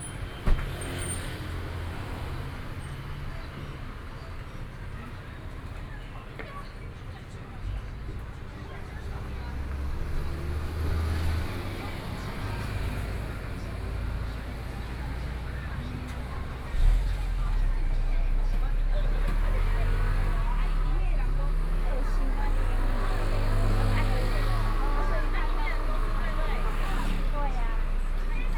Wenlin Rd., Shilin Dist. - on the roadside
Standing on the roadside, Traffic Noise, Broadcast audio shop, The pedestrian, Binaural recordings, Zoom H6+ Soundman OKM II
Shilin District, Taipei City, Taiwan, November 2013